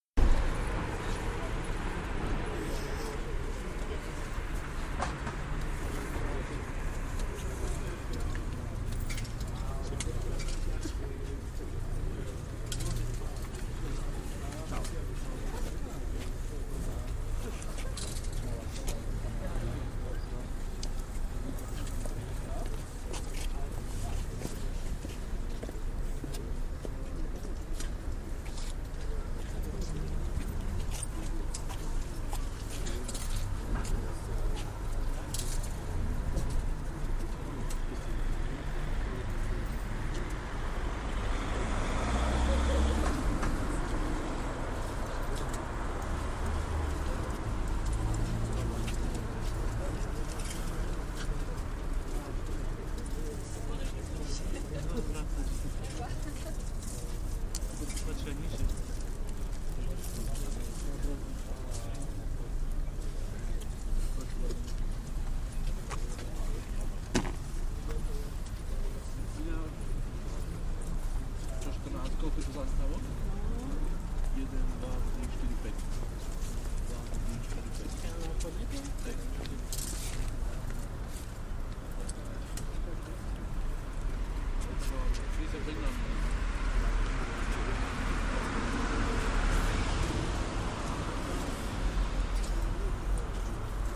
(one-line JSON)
{"title": "Žilina, Slovenská republika - Outside the train station", "date": "2014-12-06 13:30:00", "description": "Recorded with smart phone outside the station, near the road.", "latitude": "49.23", "longitude": "18.75", "altitude": "332", "timezone": "Europe/Bratislava"}